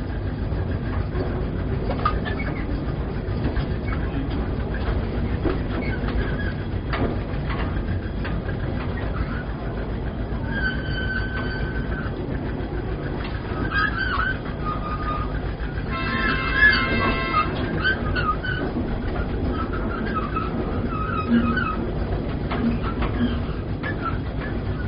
Eifelzoo, Deutschland - Fahrt mit der Parkbahn / Ride with the park railway
Eine Fahrt mit der Parkbahn: Zu hören sind der Dieselmotor, das Schlagen der Kupplungen und Stimmen der Fahrgäste.
A ride on the park train: You can hear the diesel engine, the beating of the clutches and voices of passengers.